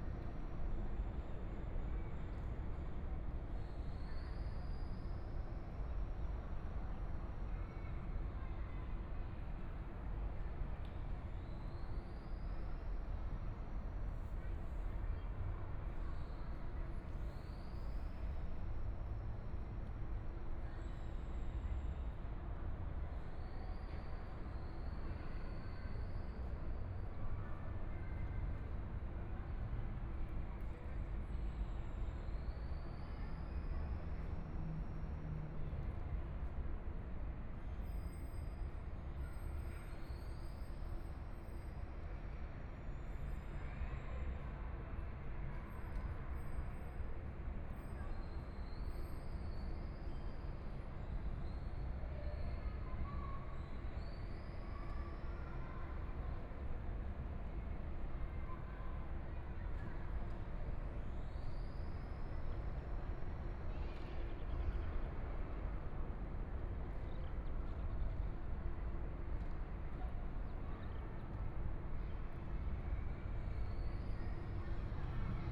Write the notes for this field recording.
Sitting below the bridge, Binaural recordings, ( Proposal to turn up the volume ), Zoom H4n+ Soundman OKM II